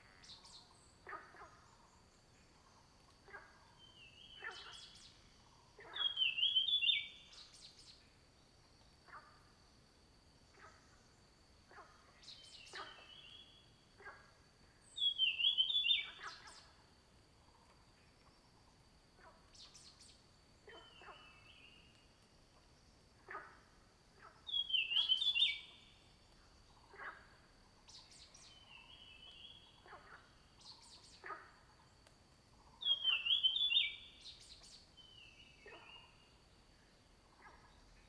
Bird sounds, Frogs chirping, Firefly habitat area
Zoom H2n MS+XY
20 April 2016, 06:23